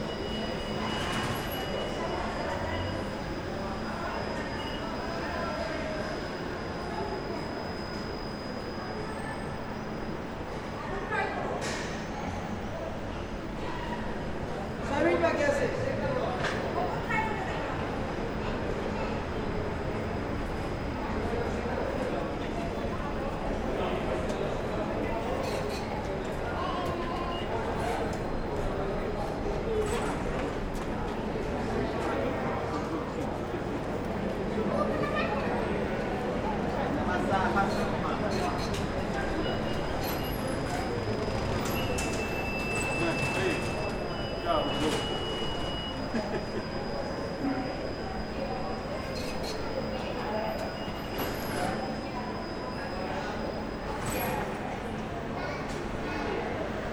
Perugia, Italia - the entrance of the minimetrò
people while enter inside the minimetrò, ticket-printing machines and sound from the minimetrò, traffic, people speaking
[XY: smk-h8k -> fr2le]